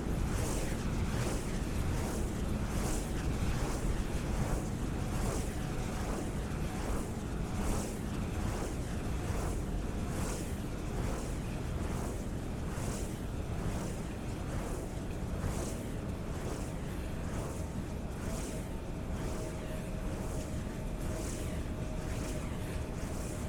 warns, skarlerdyk: wind turbine - the city, the country & me: wind turbine
wind turbine
the city, the country & me: june 15, 2014
15 June, ~14:00